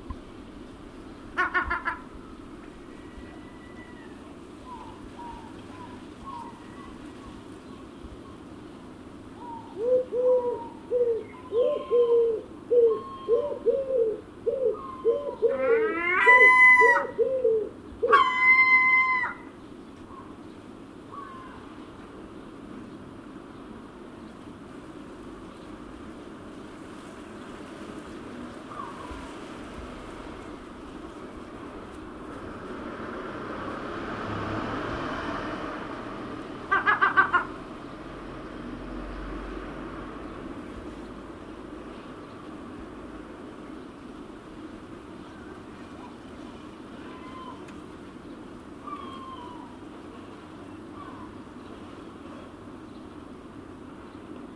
Chickerell Town wakes up
rec 8am sun 5.6.11